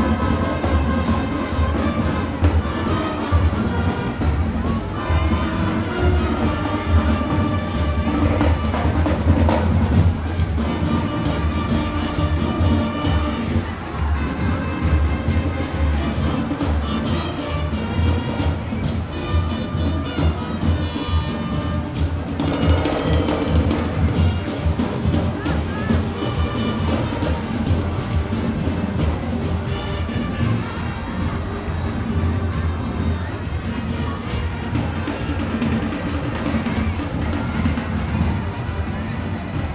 Parade on the island with marching bands. Recorded with binaural Soundman mics and Sony PCM-D100.